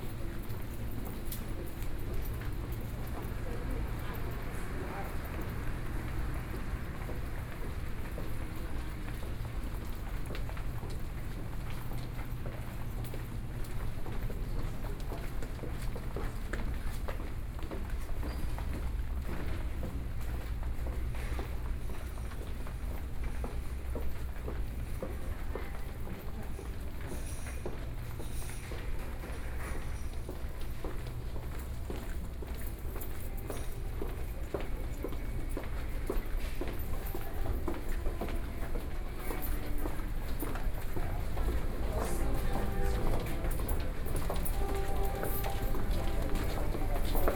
{
  "title": "Mackay Memorial Hospital, Danshui District - in the hospital",
  "date": "2012-11-08 11:13:00",
  "latitude": "25.14",
  "longitude": "121.46",
  "altitude": "17",
  "timezone": "Asia/Taipei"
}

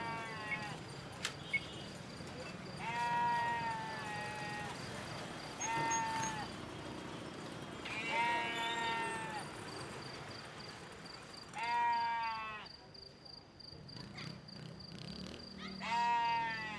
{
  "title": "Bamako, Mali - Bamako - déambulation - matin",
  "date": "2007-01-21 05:00:00",
  "description": "Bamako - Mali\nDéambulation matinale - ambiance",
  "latitude": "12.62",
  "longitude": "-8.00",
  "altitude": "334",
  "timezone": "Africa/Bamako"
}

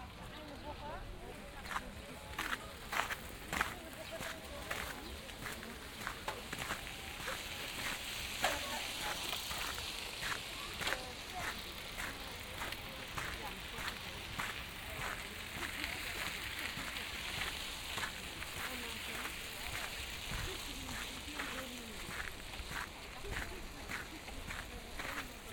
{
  "title": "soundwalk Elsenstr. - Lohmühle - old railway track",
  "date": "2010-06-27 19:20:00",
  "description": "walking along an (possibly) old railway stretch from Elsenstr. to Lohmühlenufer on a warm summer sunday evening, 10m above the normal city level. (binaural recording, use headphones)",
  "latitude": "52.49",
  "longitude": "13.45",
  "altitude": "36",
  "timezone": "Europe/Berlin"
}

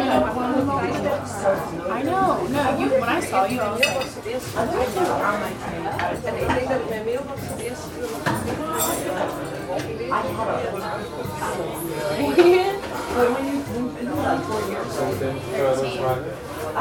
{
  "title": "Zeedijk, Amsterdam, The Netherlands - Thai",
  "date": "2013-11-12 18:41:00",
  "description": "Thai restaurant Bird, Zeedijk. Recorded with a Sony D-50.",
  "latitude": "52.37",
  "longitude": "4.90",
  "altitude": "8",
  "timezone": "Europe/Amsterdam"
}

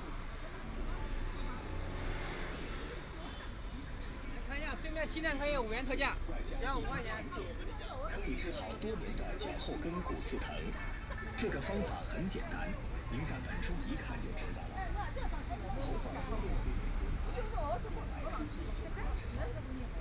{
  "title": "Sweet love branch, Shanghai - soundwalk",
  "date": "2013-11-23 12:13:00",
  "description": "Walking along the street, The crowd and the sound of the store, Traffic Sound, Zoom H6+ Soundman OKM II",
  "latitude": "31.27",
  "longitude": "121.48",
  "altitude": "18",
  "timezone": "Asia/Shanghai"
}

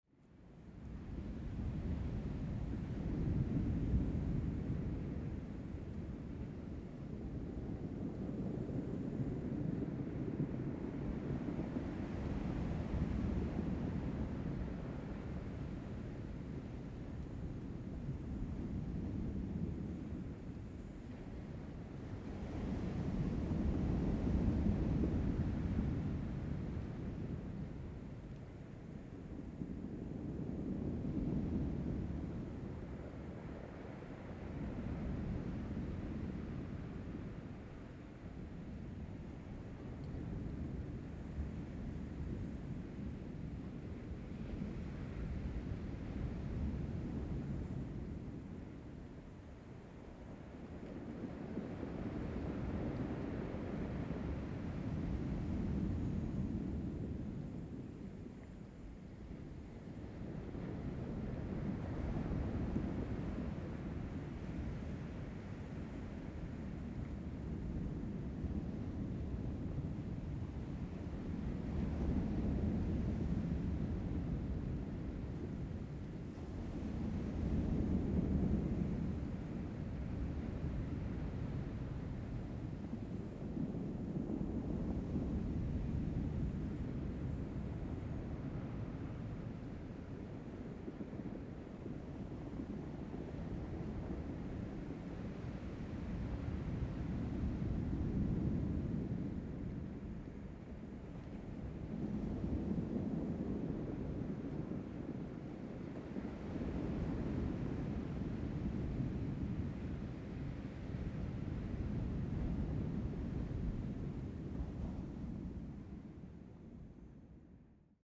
{
  "title": "台灣台東縣達仁鄉南田村 - On the coast",
  "date": "2014-09-05 15:01:00",
  "description": "in front of the Sound of the waves, The weather is very hot\nZoom H2n MS +XY",
  "latitude": "22.25",
  "longitude": "120.89",
  "altitude": "1",
  "timezone": "Asia/Taipei"
}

